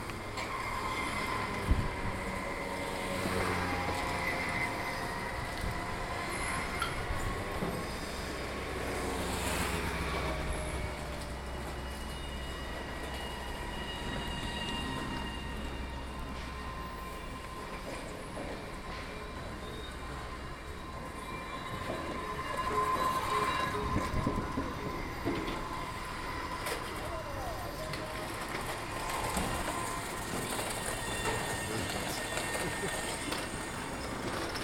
Tsukiji Market, Chome Tsukiji, Chūō-ku, Tōkyō-to, Japan - Early in the morning at the Tsukiji Fish Market
The Tsukiji Fish Market is the stuff of legends; it's where all the fresh fish is purchased early in the morning to become sashimi and sushi later on the same day all over Tokyo. The size of the market and diversity of fish produce is incredible, and there is an amazing sense of many buyers and sellers quietly and efficiently setting about the day's trade. There are lethal little motorised trolleys that zip up and down the slender aisles between the vendors, piled high with boxes of fish. Great band-saws deal with the enormous deep-frozen tuna that come in, and there are squeaky polystyrene boxes everywhere full of recently caught seafood.